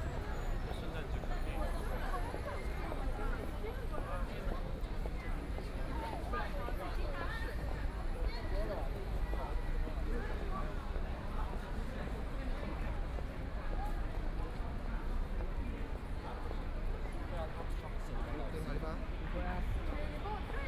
Tibet Road, Huangpu District - Walking on the road
Walking in the street, Traffic Sound, Street, with moving pedestrians, Binaural recording, Zoom H6+ Soundman OKM II
Huangpu, Shanghai, China, 23 November 2013